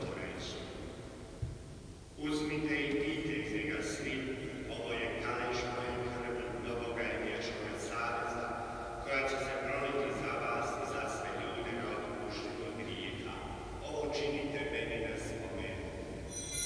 {
  "title": "St. Sebastian, Ackerstraße, Berlin, Deutschland - St. Sebastian church, Ackerstraße, Berlin - Croatian mass.",
  "date": "2006-01-10 17:20:00",
  "description": "St. Sebastian church, Ackerstraße, Berlin - Croatian mass. Priest and believers.\n[I used an MD recorder with binaural microphones Soundman OKM II AVPOP A3]",
  "latitude": "52.54",
  "longitude": "13.38",
  "timezone": "Europe/Berlin"
}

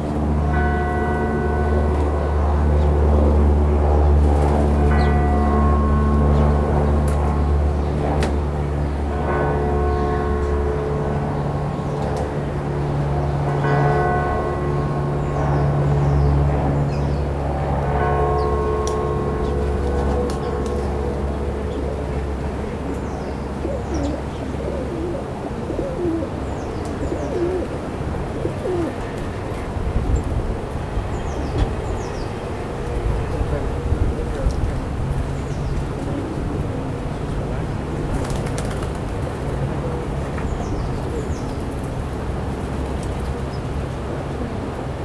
{
  "title": "Raamgracht, Amsterdam, Netherlands - Sparrows, Doves, Carillon of Zuiderkerk",
  "date": "2020-04-27 10:30:00",
  "description": "Recorded under a shrub, one of the few places in the city where sparrows still meet",
  "latitude": "52.37",
  "longitude": "4.90",
  "altitude": "3",
  "timezone": "Europe/Amsterdam"
}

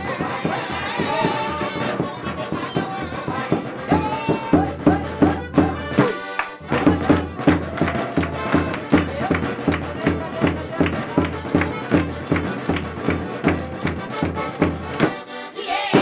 {
  "title": "Lalana Ramboatiana, Antananarivo, Madagaskar - Madagasiraka-song ands dance by old palace",
  "date": "2001-12-20 15:45:00",
  "description": "Madagasiraka-song ands dance by old palace. One dancer was dressed up like a turkey",
  "latitude": "-18.92",
  "longitude": "47.53",
  "altitude": "1424",
  "timezone": "Indian/Antananarivo"
}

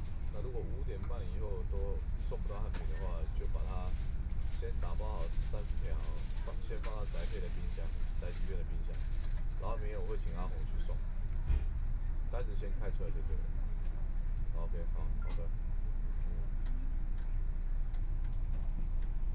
{
  "title": "Hualien Station, Taiwan - Interior of the train",
  "date": "2014-01-18 14:40:00",
  "description": "Interior of the train, Binaural recordings, Zoom H4n+ Soundman OKM II",
  "latitude": "23.99",
  "longitude": "121.60",
  "timezone": "Asia/Taipei"
}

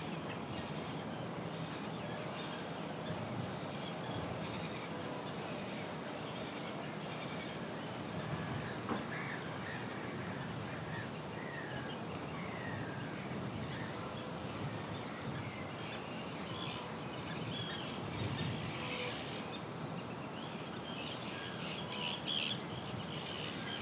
{
  "title": "Waltham Abbey, UK - Bittern Hide",
  "date": "2017-05-20 18:00:00",
  "description": "Recording on Roland R44-e + USI Pro in Lea Valley Park, the geese and seagulls were only really audible from this location due to the trees and their distance, the hide provided a clearing and a good listening position.",
  "latitude": "51.71",
  "longitude": "-0.01",
  "altitude": "19",
  "timezone": "Europe/London"
}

